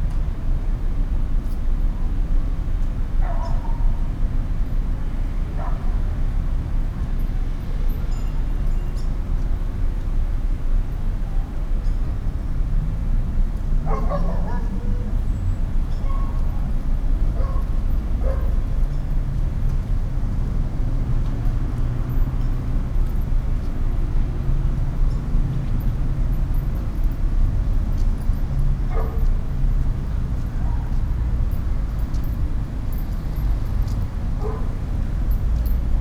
I made this recording on September 9th, 2021, at 8:17 p.m.
I used a Tascam DR-05X with its built-in microphones and a Tascam WS-11 windshield.
Original Recording:
Type: Stereo
En el Parque de Panorama.
Esta grabación la hice el 9 de septiembre de 2021 a las 20:17 horas.